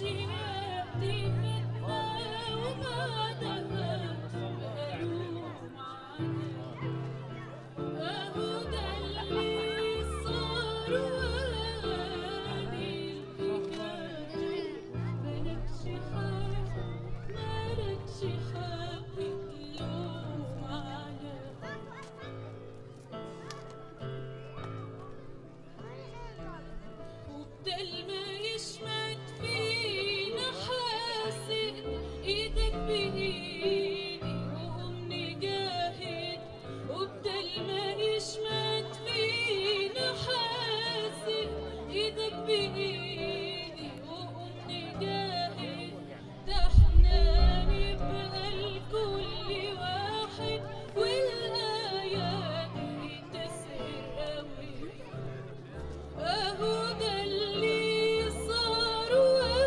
Unnamed Road, Tamra, ישראל - singing in a park